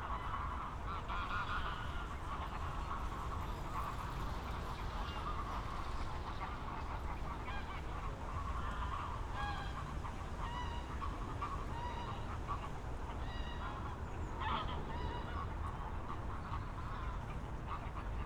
Moorlinse, Sunday afternoon in autumn, many geese gathering at the pond, cyclists and pedestrians passing by, an aircraft, a very loud car at the neary Autobahn, trains and traffic noise
(SD702, Audio Technica BP4025)
Berlin, Germany, 25 October